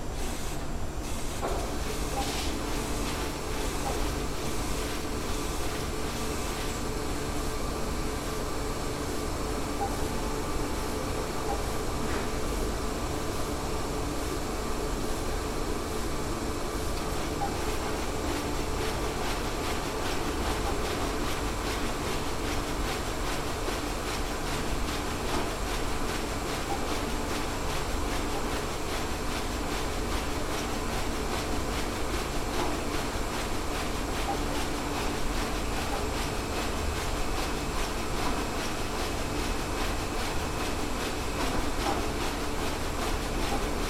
Kastrycnetskaya, Minsk, Belarus - Printing house
7 August